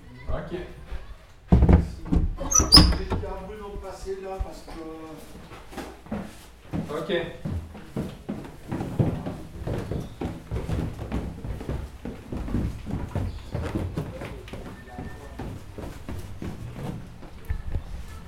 Lausanne, Parc Mon Repos - Büro visarte
Visarte canton vaud, Waadt, Parc mon Repos unter Bundesgericht in Lausanne